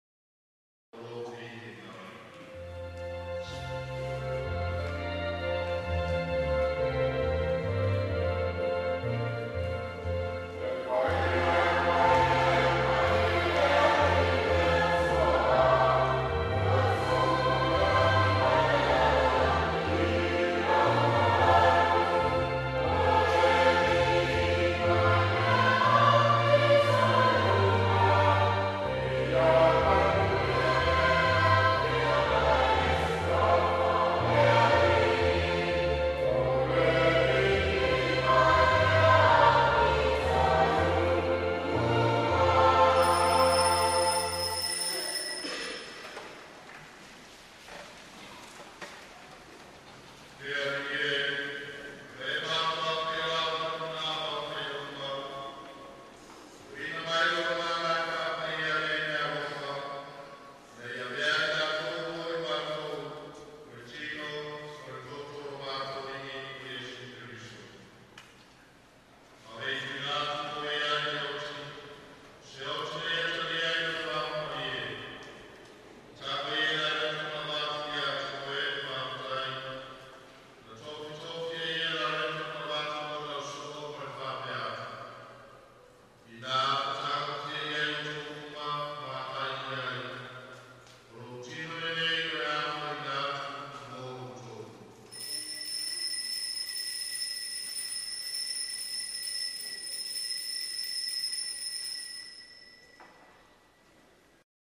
N Coast Rd, Tuasivi, Samoa - Service Song
Tuasivi Catholic Church Service Song and Speech
12 July 2000, ~10:00